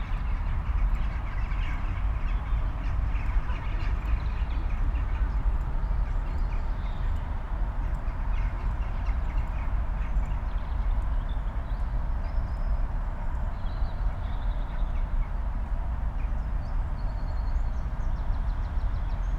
Oxford rush hour, heard from a distant position, in Oxford University Park, amplified.
(Sony D50, Primo EM172)
14 March, 18:15